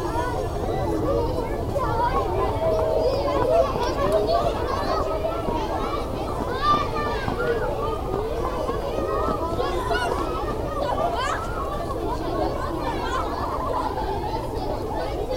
The Sart school, children are playing all around.
Court-St.-Étienne, Belgium, 11 September, 10:45